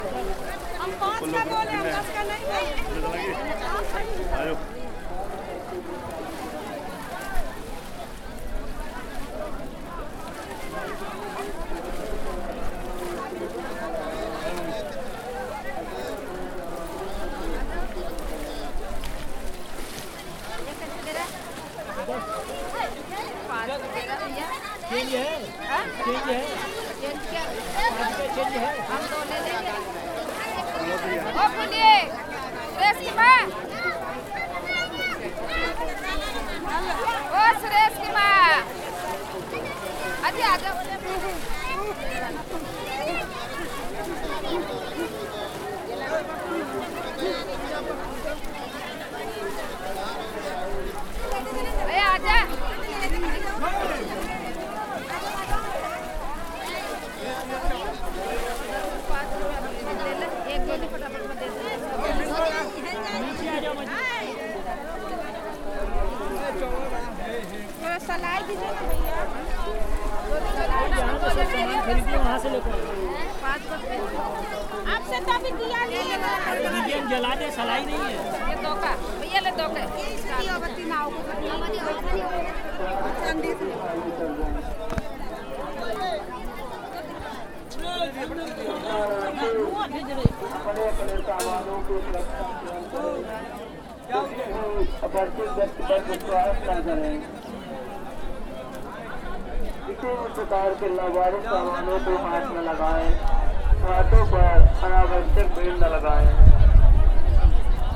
varanasi: by the ghats - Bath in the Ganga river (Varanasi)
Recording the bath ritual in the Ganga river at 6:30am in 2013